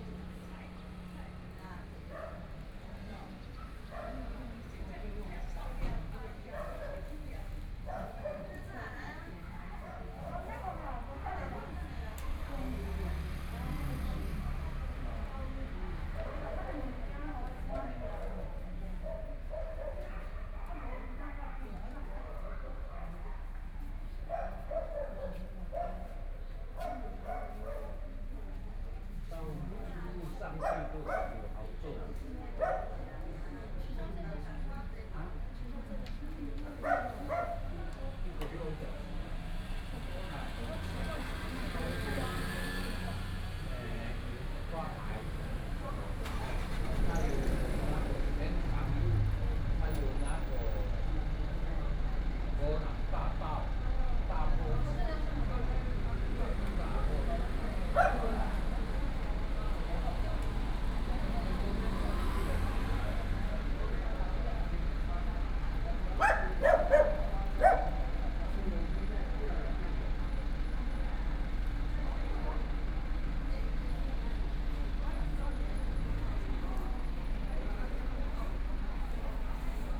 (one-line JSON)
{"title": "Fuxing Rd., Taitung - In the bus station", "date": "2014-01-16 10:26:00", "description": "In the bus station, Traffic Sound, Dialogue among the elderly, Dogs barking, Binaural recordings, Zoom H4n+ Soundman OKM II ( SoundMap2014016 -4)", "latitude": "22.75", "longitude": "121.15", "timezone": "Asia/Taipei"}